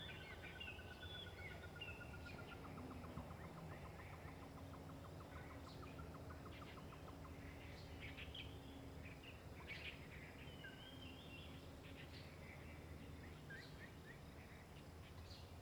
Wetlands, Bird sounds
Zoom H2n MS+XY